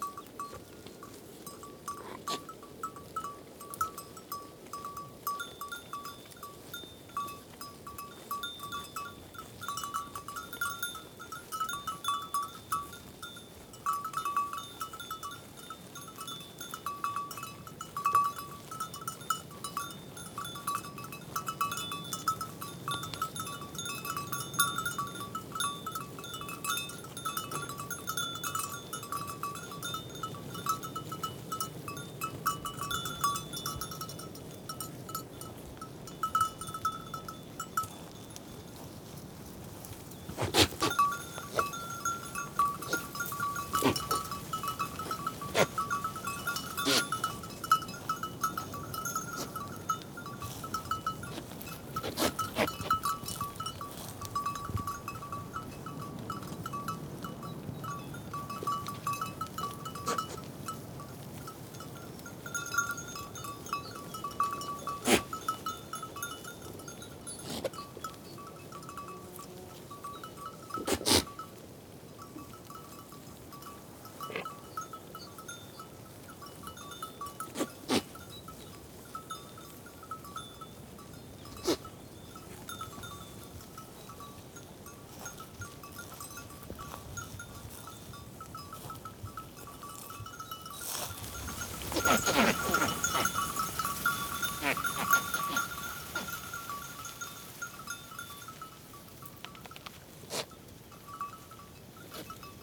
Goats grazing in the meadows. Sometimes they are afraid and move in herds.
sound Device Mix Pre6II + Cinela Albert TRI DPA4022.
6 April 2021, 16:03